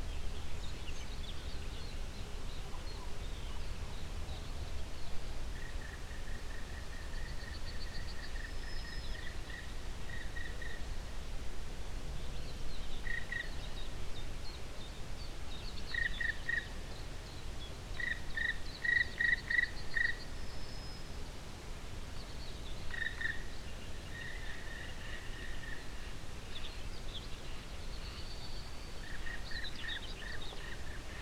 Sitting outside under a tree nearby the barn in the morning time. The sounds of morning birds and insects in the mellow morning wind - the incredible absence of engine noise.
international sound ambiences - topographic field recordings and social ambiences
Lithuania